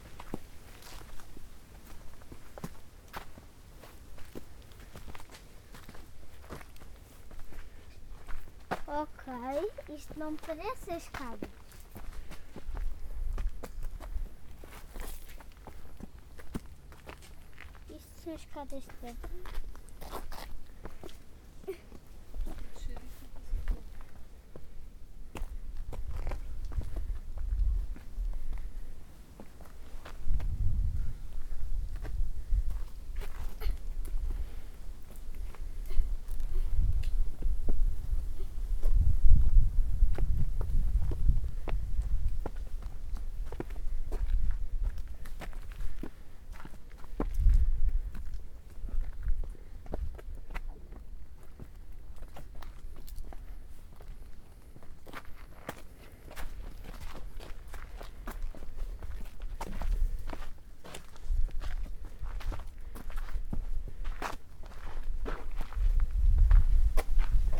Capuchos Sintra, Lisbon, climb
Capuchos Convent, Sintra, Climbing rocks, leaves, children and adults talking